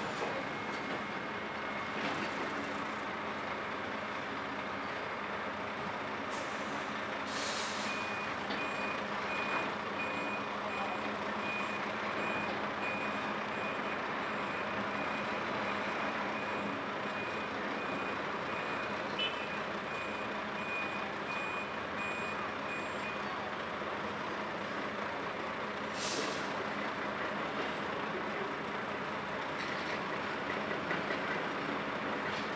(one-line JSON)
{"title": "L'Aquila, San Bernardino-sagrato - 2017-05-29 04-S.Bernardino", "date": "2017-05-29 13:30:00", "latitude": "42.35", "longitude": "13.40", "altitude": "725", "timezone": "Europe/Rome"}